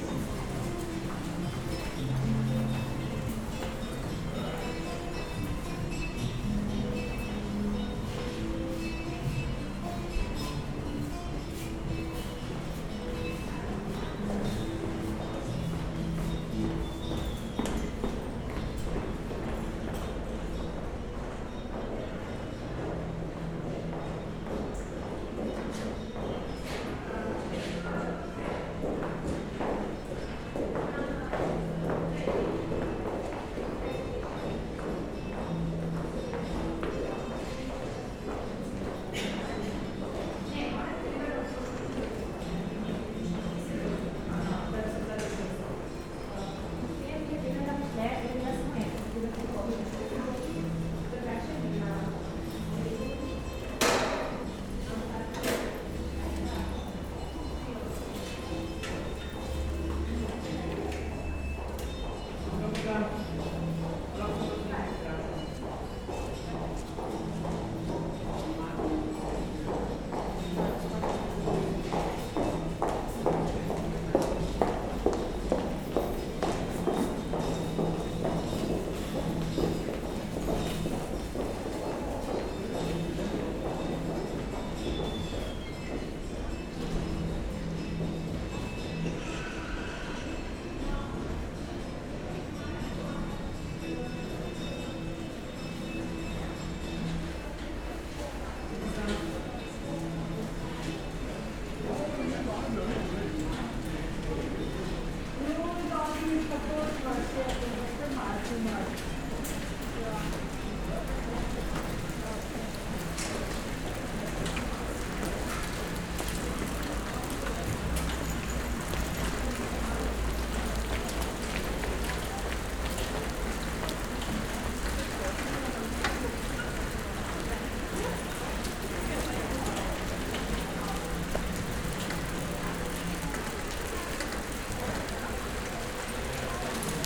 a walk through the passage between Copova and Nazorjeva ulica, hail and thunderstorm outside
(Sony PCM D50, DPA4060)
Ljubljana, Slovenia